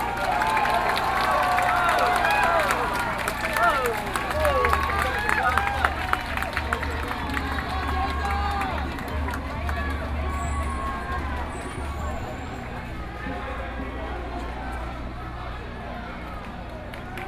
Ministry of the Interior, Taipei City - Nonviolent occupation
To protest the government's dereliction of duty and destruction of human rights, Zoom H4n+ Soundman OKM II